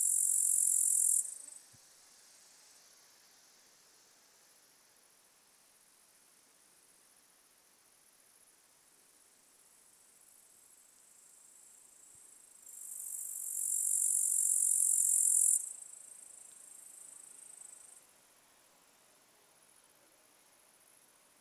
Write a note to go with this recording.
lone grashopper at Armaliskiai mound...